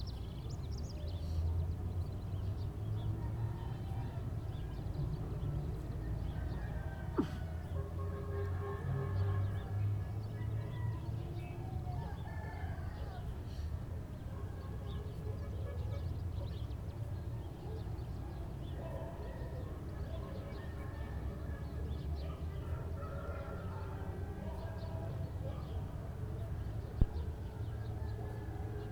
{
  "title": "Pachacutec - Pachacutec Shanty Town, Peru",
  "date": "2010-05-26 06:00:00",
  "description": "Pachacutec Shanty Town, Early Morning Ambience. World Listening Day. WLD.",
  "latitude": "-11.87",
  "longitude": "-77.13",
  "altitude": "39",
  "timezone": "America/Lima"
}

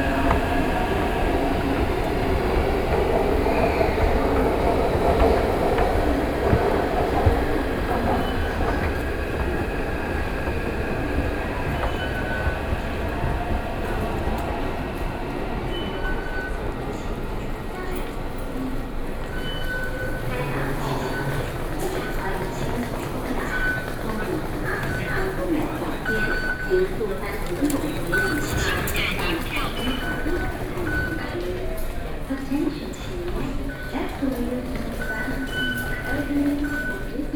{
  "title": "頂溪站, Yonghe District, New Taipei City - Walking in the MRT station",
  "date": "2012-12-07 17:01:00",
  "latitude": "25.01",
  "longitude": "121.52",
  "altitude": "16",
  "timezone": "Asia/Taipei"
}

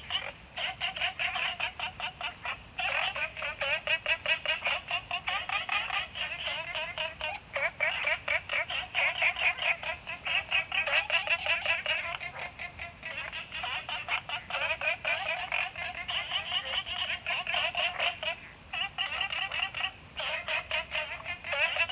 {
  "title": "青蛙ㄚ 婆的家, Taomi Ln., Puli Township - Frogs chirping",
  "date": "2015-09-03 20:31:00",
  "description": "In the bush, Frogs chirping, Small ecological pool\nZoom H2n MS+XY",
  "latitude": "23.94",
  "longitude": "120.94",
  "altitude": "463",
  "timezone": "Asia/Taipei"
}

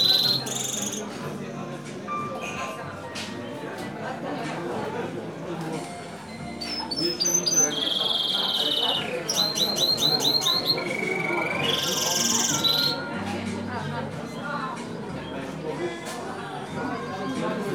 {
  "title": "Poznan, Citadel Park - caged bird in a cafe",
  "date": "2014-06-15 18:38:00",
  "description": "garden of a busy cafe on a sunny afternoon. all tables taken. place entangled with conversations, running waiters, clank of silverware. a bird in a cage outshouting everybody.",
  "latitude": "52.42",
  "longitude": "16.93",
  "altitude": "81",
  "timezone": "Europe/Warsaw"
}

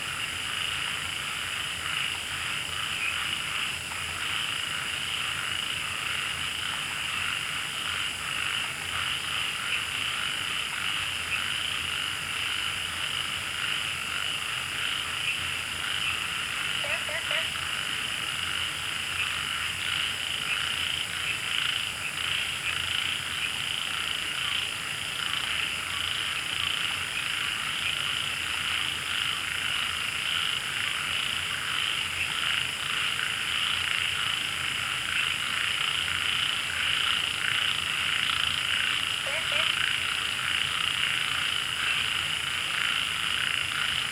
{
  "title": "茅埔坑溼地, 南投縣埔里鎮桃米里 - Frogs chirping",
  "date": "2015-08-10 20:04:00",
  "description": "Frogs chirping, Insects sounds, Wetland\nZoom H2n MS+ XY",
  "latitude": "23.94",
  "longitude": "120.94",
  "altitude": "470",
  "timezone": "Asia/Taipei"
}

